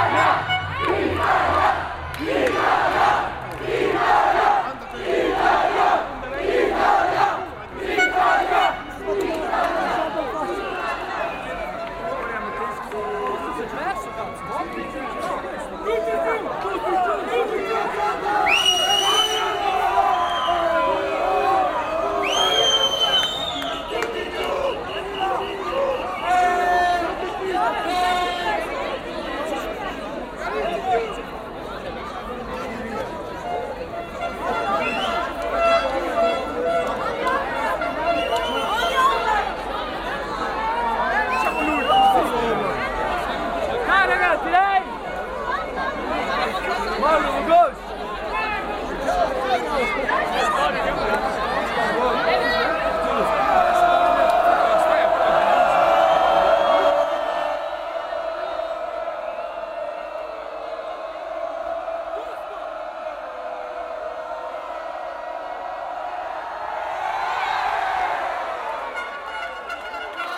Italian Fans, Aarau, Schweiz - Italian Fans 1
In the European Championship Italy won a match against Spain. The italian fans of Aarau walks through the city and meet at a circle. Signalhorns, singing and shouting.